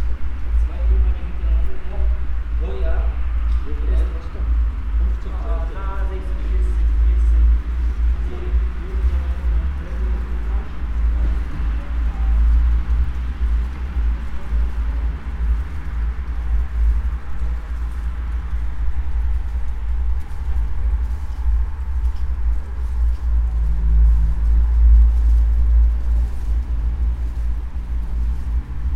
while windows are open, Maribor, Slovenia - bamboos, curtain, paper
bamboos, curtain and japanese paper, moved by wind, night traffic ambiance, passersby